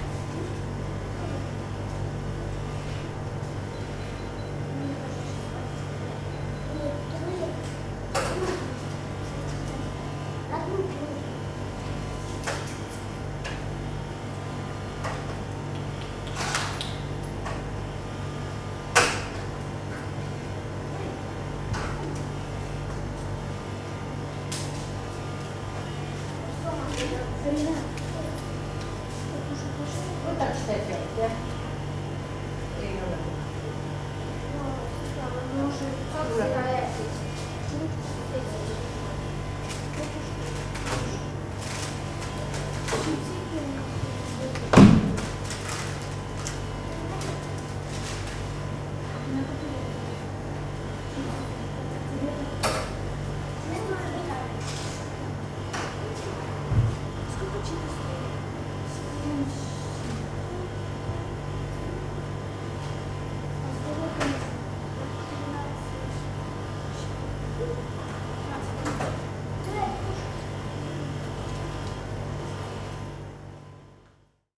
Kiikla Shop, Kiikla Estonia
sounds captured inside the local shop. recorded during the field work excursion for the Estonian National Museum.